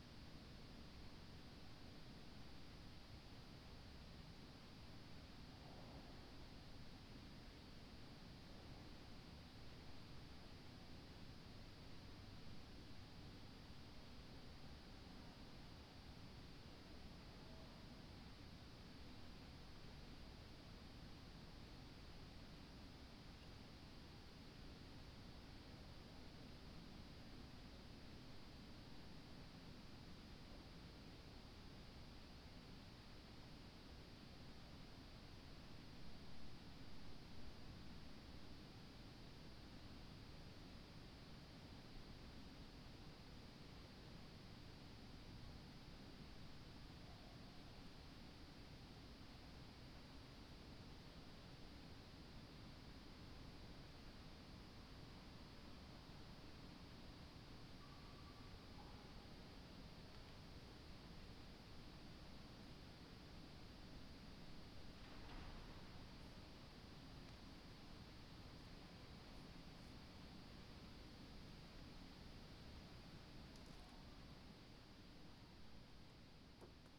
Ascolto il tuo cuore, città. I listen to your heart, city. Several chapters **SCROLL DOWN FOR ALL RECORDINGS** - Stille Nacht Dicembre 2020 in the time of COVID19: soundscape.
"Stille_Nacht_Dicembre_2020 in the time of COVID19": soundscape.
Chapter CXLVI of Ascolto il tuo cuore, città. I listen to your heart, city
Monday, December 14th 2020. Fixed position on an internal terrace at San Salvario district Turin, more then five weeks of new restrictive disposition due to the epidemic of COVID19.
Four recording of about 6’ separated by 7” silence; recorded between at 11:07 p.m. at 23:46 p.m. duration of recording 24’20”